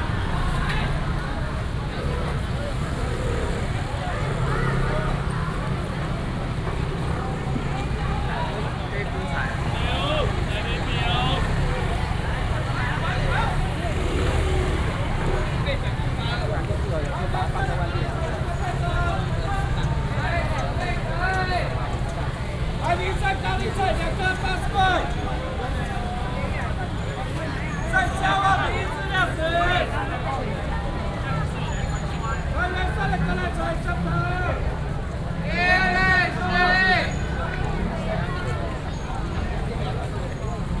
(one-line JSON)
{"title": "Chongqing Market, Banqiao Dist. - Traditional Taiwanese Markets", "date": "2017-04-30 17:08:00", "description": "Traditional Taiwanese Markets, vendors peddling, traffic sound", "latitude": "25.00", "longitude": "121.46", "altitude": "21", "timezone": "Asia/Taipei"}